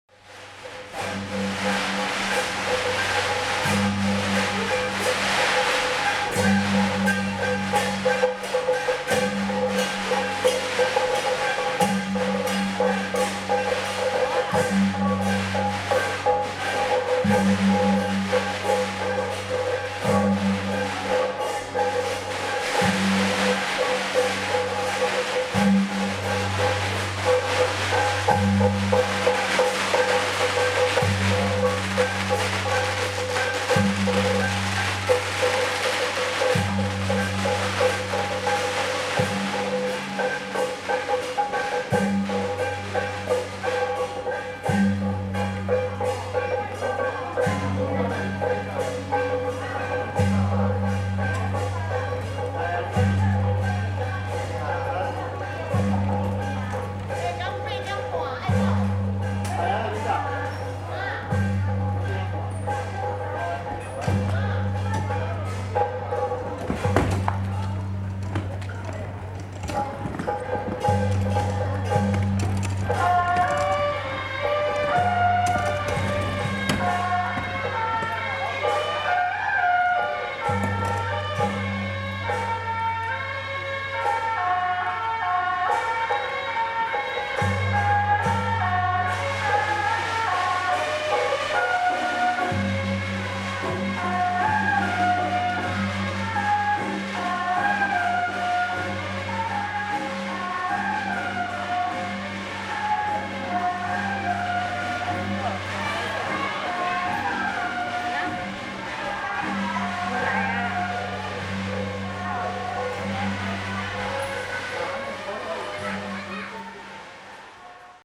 Aly., Ln., Tonghua St., Da’an Dist. - temple festivals
in a small alley, temple festivals, The sound of firecrackers and fireworks
Sony Hi-MD MZ-RH1 + Sony ECM-MS907